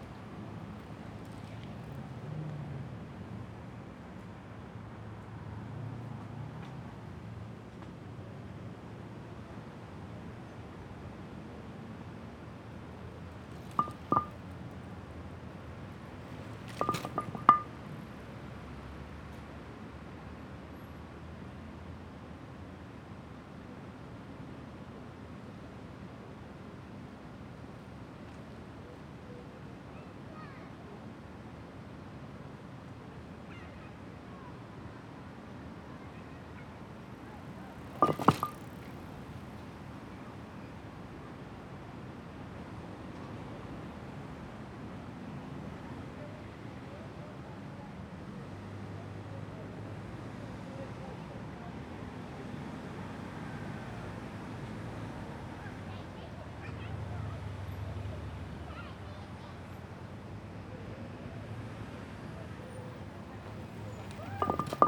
Prinzenallee, Soldiner Kiez, Wedding, Berlin - Prinzenallee - Loose paving slab in the bicycle lane
Lose Bodenplatte auf dem Fahrradweg.
Für über zwei Jahre bildete das Geräusch der wackelnden Bodenplatte so etwas wie eine unscheinbare "Soundmark" (R. Murray Schafer) dieser Kreuzung. Im September 2013 wurde sie schließlich repariert, nun ist sie verstummt.
Prinzenallee, Berlin - Loose paving slab in the bicycle lane. Having been a somewhat unpretentious 'soundmark' (R. Murray Schafer) of this street corner for at least more than two years, the pavement slab has been repaired in September 2013 - now silent.
[I used a Hi-MD-recorder Sony MZ-NH900 with external microphone Beyerdynamic MCE 82]